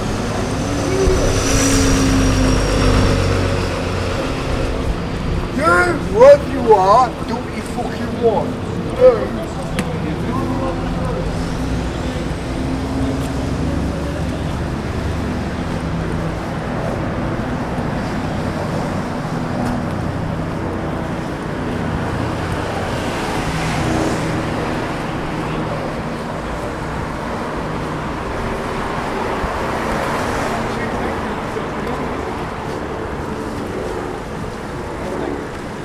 Gosford St, Coventry, UK - Tuesday afternoon, walking down Gosford Street